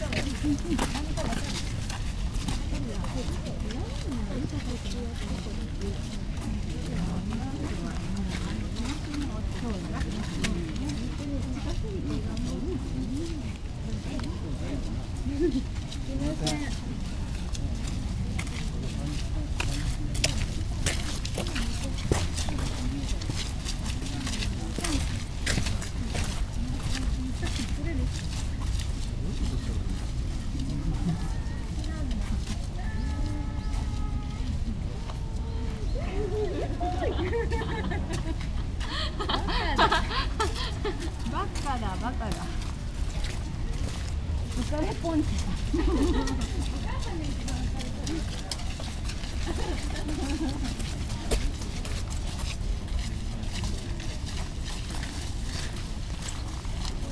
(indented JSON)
{
  "title": "gravel path, Ginkakuji gardens - walkers",
  "date": "2014-11-02 15:12:00",
  "description": "steps gardens sonority",
  "latitude": "35.03",
  "longitude": "135.80",
  "altitude": "96",
  "timezone": "Asia/Tokyo"
}